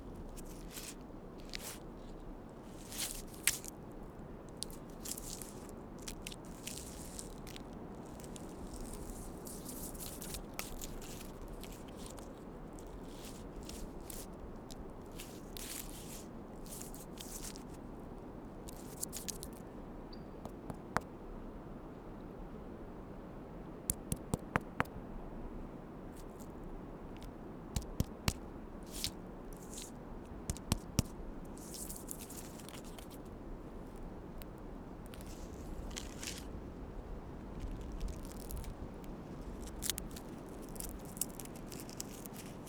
Danby Road Ithaca, NY, USA - Scraping bark off a tree
I went for a walk in the Ithaca College Natural Lands and recorded myself scraping bark off of a tree. Recorded on a mix pre-6 with a shotgun microphone. Very windy day with almost a foot of snow on the ground for some parts of the walk.